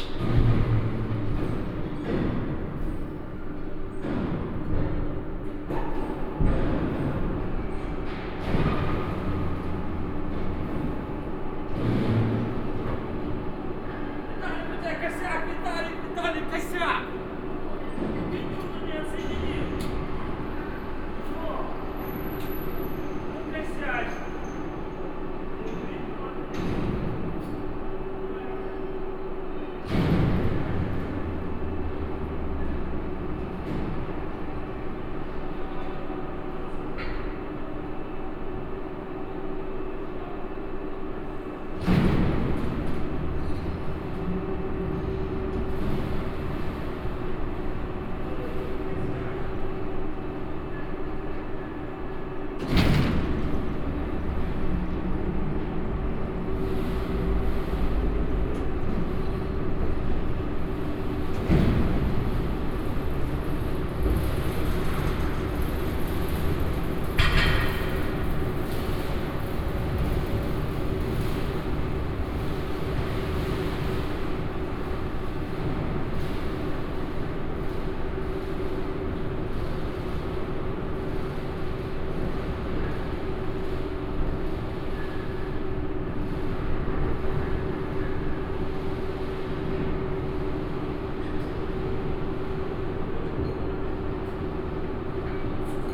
Brest, Belorus - Assembly of a new floor to the train
From inside the coach while it's lifted and workers assemble a new floor. The train including all passengers entered a hall where the process takes place in order to make it fit the size of Russian rails. Binaural recording (Tascam DR-07 + OKM Klassik II).
Brest, Belarus, October 2015